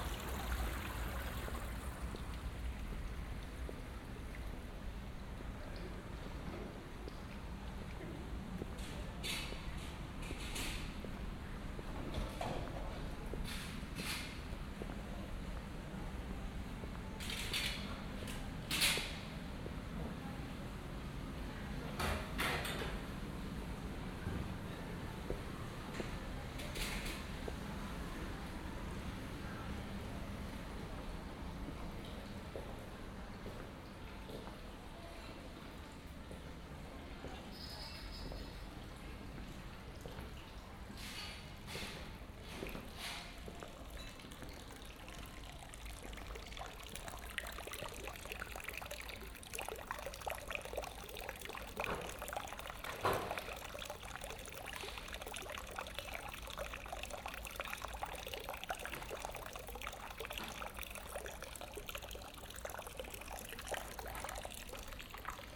Aarau, Rathausgasse, Night, Schweiz - Nachtbus
While during the evening walks the busses were absent, now one crosses the recording